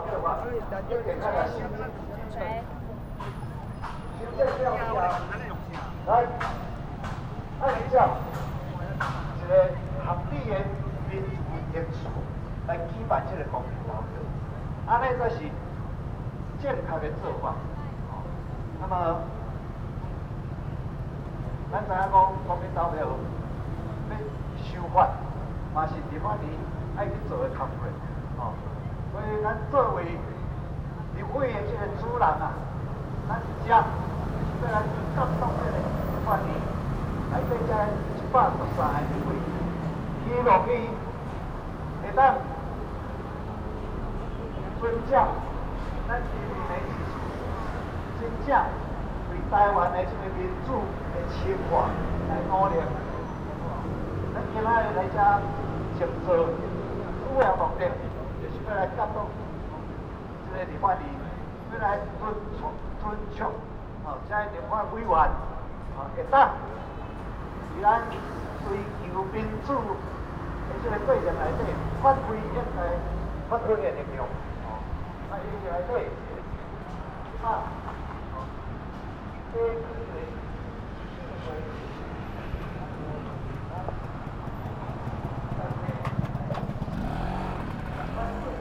Legislative Yuan - Protest
Protest, Speech, Sony PCM D50 + Soundman OKM II